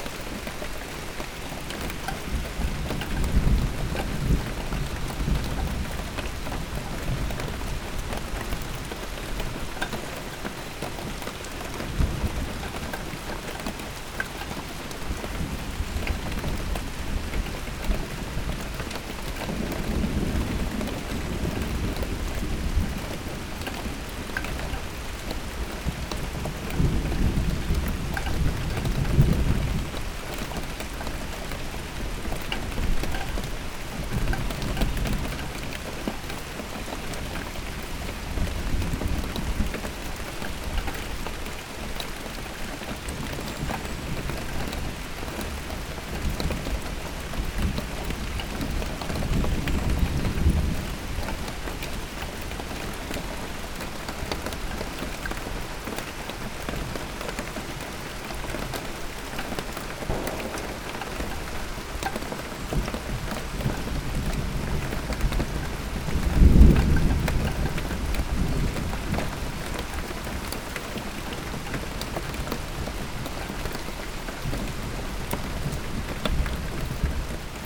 Mont-Saint-Guibert, Belgique - Rain
It's raining since a long time. It's a small storm. Just near a shed, a gutter is dismantled. Drops are falling on a old wheelbarrow.
Mont-Saint-Guibert, Belgium, May 2016